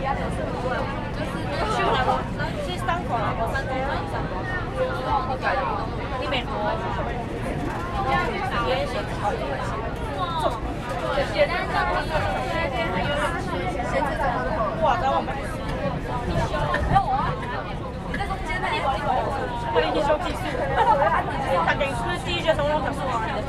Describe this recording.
Many students in the train, Sony ECM-MS907, Sony Hi-MD MZ-RH1